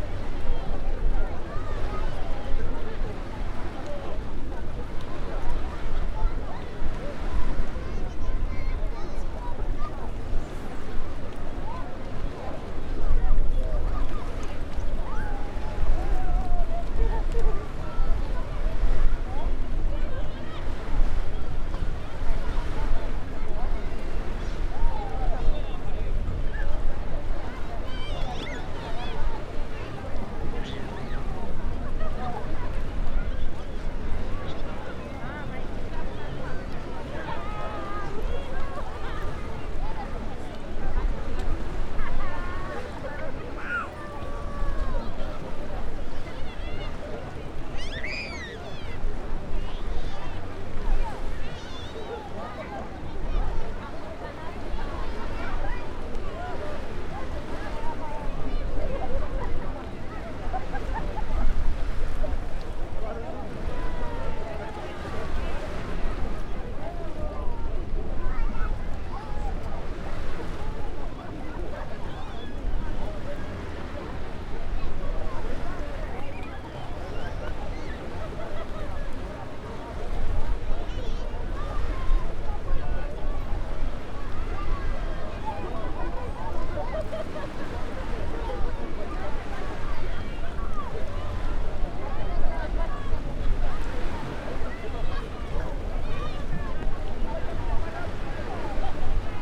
Mamaia Beach Promontory, Romania - Rocky Promontory on the Beach, Daytime
On a small headland made of tetrapods and other rocks fisherman gather alongside with tourists who want to take a break from the busy beach. The latter is still present in the soundscape with human noises, music rumble and boat-engine noises. Turning the microphone away from it and towards the rocks brings a different type of ambience, as the "generic" sea sound of waves crashing on the shore is less present and a calmer watery sound (almost akin to a lake) is present. Recorded on a Zoom F8 using a Superlux S502 ORTF Stereo Microphone.
România, June 2019